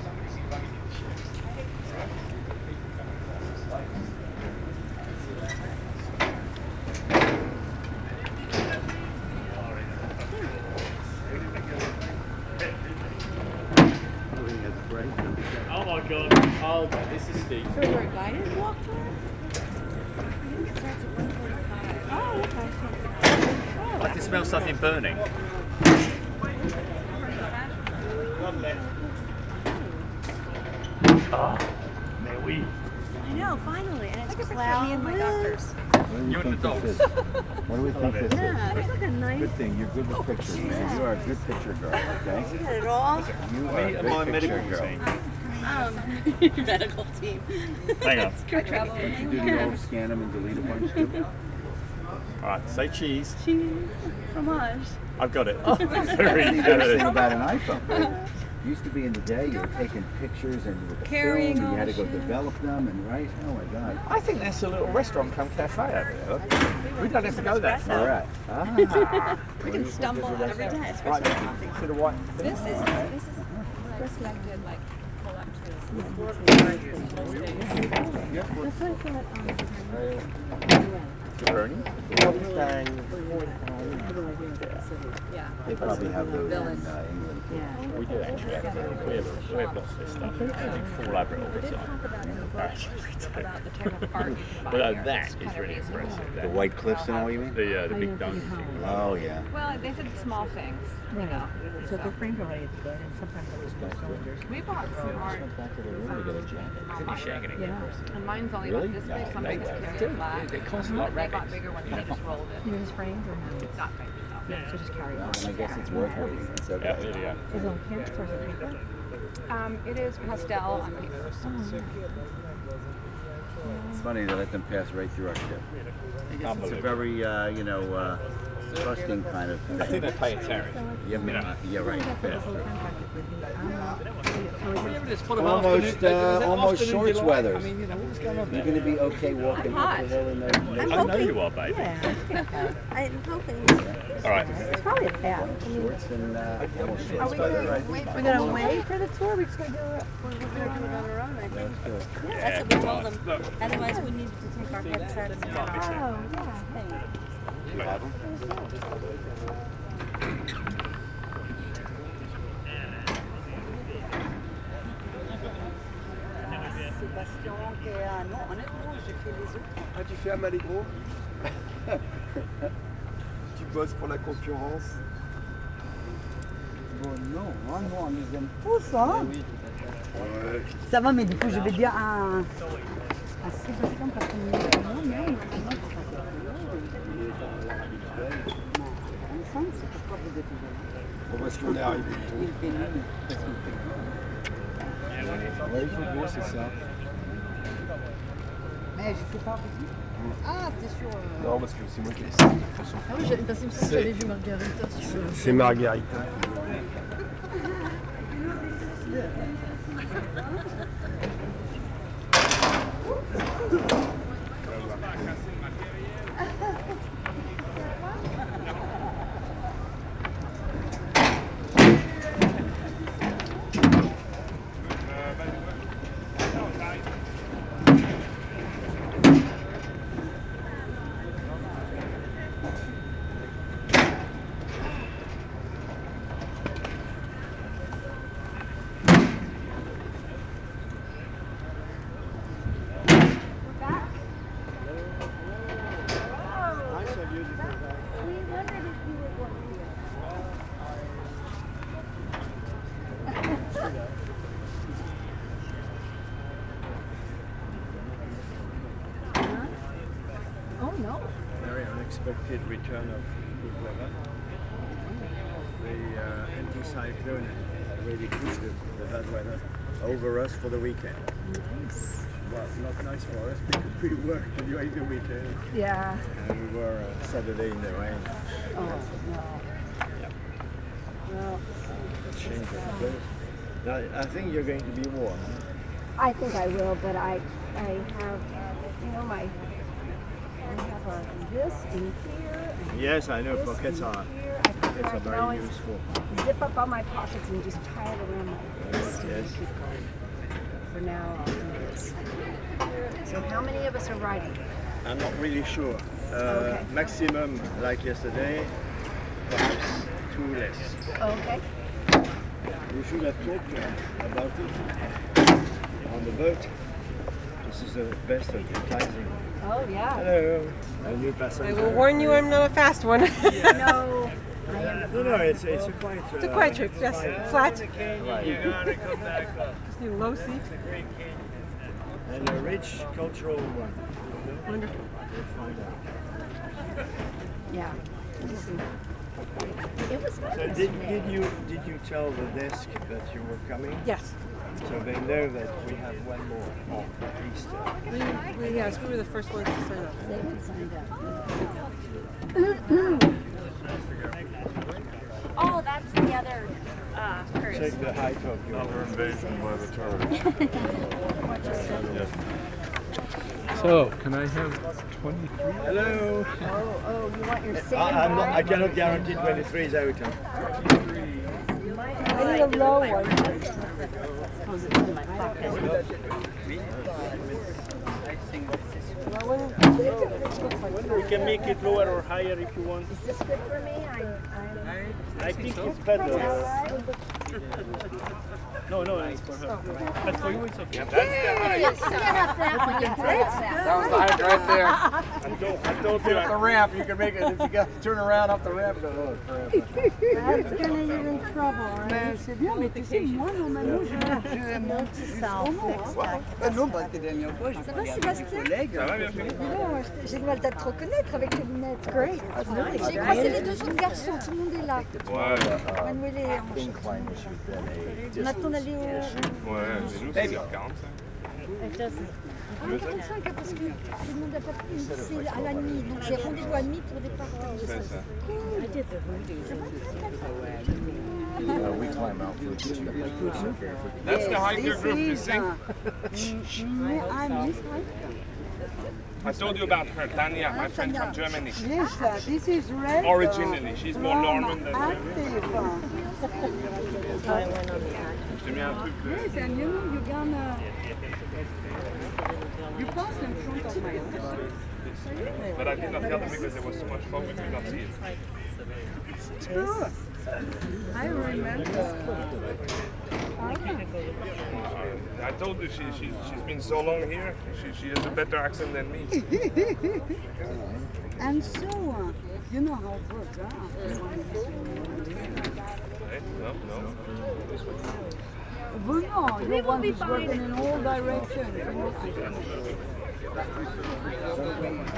Les Andelys, France - Tourist going out
Recording of the american tourist of the Viking Kadlin boat, berthed like on the aerial view. They prepair a walk to the Château Gaillard, a famous old castle in Les Andelys (the name of this city is said Layz'Andlee). On the aerial view, I think the boat berthed is the Seine Princess, because of its colours.